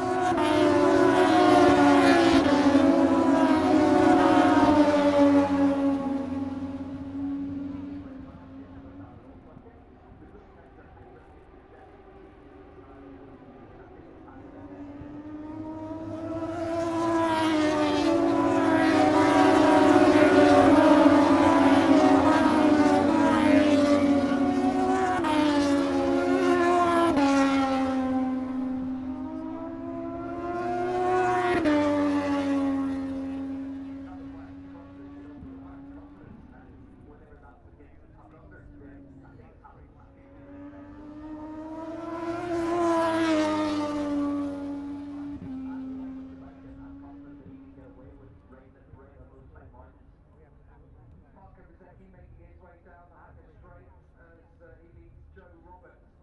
Silverstone Circuit, Towcester, UK - british motorcycle grand prix 2021 ... moto two ...
moto two free practice three ... copse corner ... olympus ls 14 integral mics ...
East Midlands, England, United Kingdom, 28 August 2021, 10:55